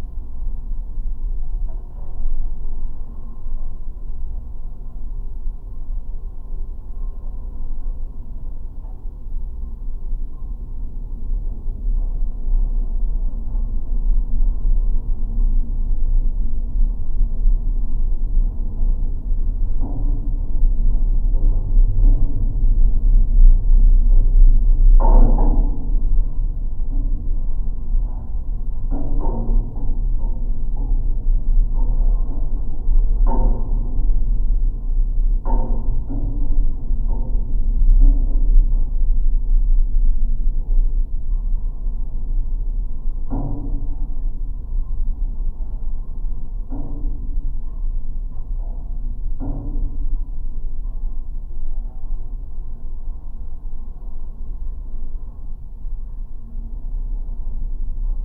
Šepeta, Lithuania, metallic tower
abandoned metallic tower with lamp. geophone recording - low frequencies
Panevėžio apskritis, Lietuva, 14 August 2022